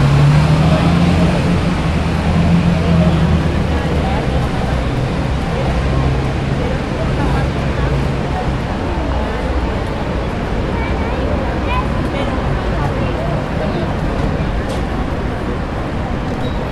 another
egistrazione of sound in arenal drive. More people sound than the previous
Bilbao, Biscay, Spain